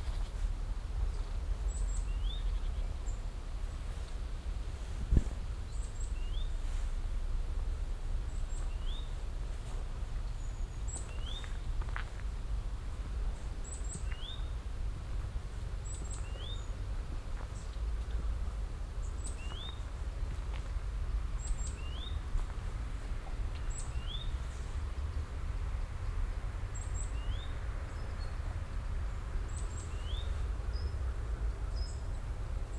kinzweiler, kinzweiler burg, weg unter bäumen
nachmittags unter birken und weiden auf weg zur burg, leichte winde bewegen die umgebenden blätter, verkehr von der strasse im hintergrund
soundmap nrw: social ambiences, topographic field recordings
kinzweiler burg, weg - zufahrt